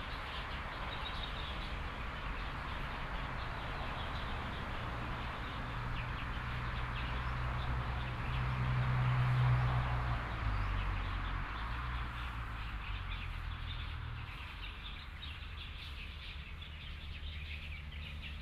Gengsheng N. Rd., Beinan Township - Roadside woods
Traffic Sound, Birds Chicken sounds, Binaural recordings, Zoom H4n+ Soundman OKM II ( SoundMap2014016 -9)
Taitung County, Taiwan, 16 January 2014, ~13:00